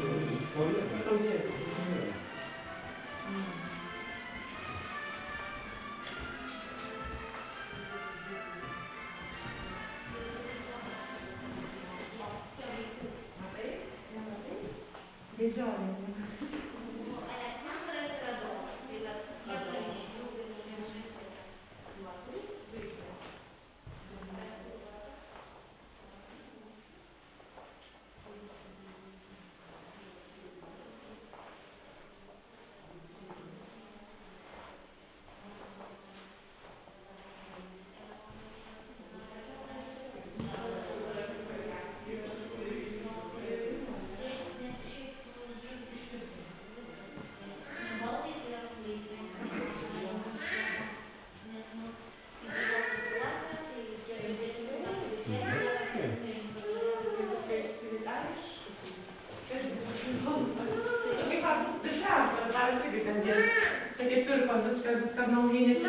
Burbiskis manor, Lithuania, museum
Inside Burbiskis Manor's museum